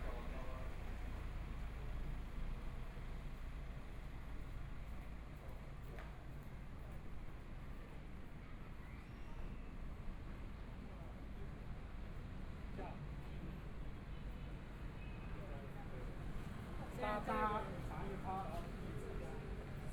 中山區中山里, Taipei City - Night walk in the alley
Night walk in the alley, Went to the main road from the alley, Traffic Sound
Binaural recordings
Zoom H4n+ Soundman OKM II
Taipei City, Taiwan, 17 February 2014, 19:23